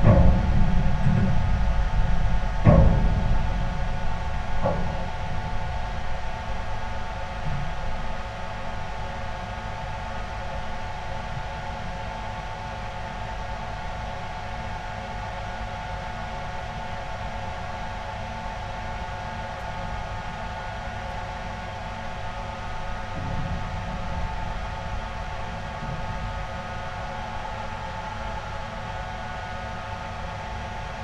pohorje mountain watchtower
the resonance inside a window frame at the base of a mountain watchtower which also provided power to a ski-lift